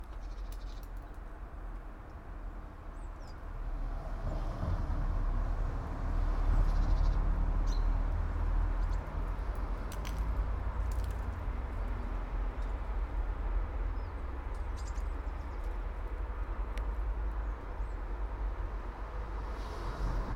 all the mornings of the ... - jan 27 2013 sun